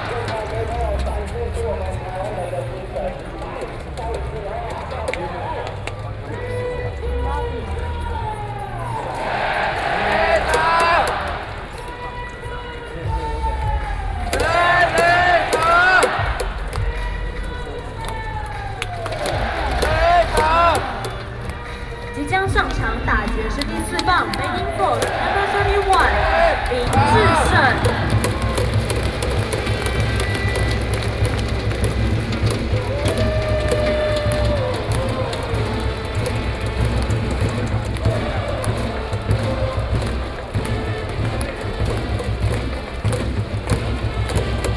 Baseball field, Cheers and refueling sound baseball game, Binaural recordings, ( Sound and Taiwan - Taiwan SoundMap project / SoundMap20121115-31 )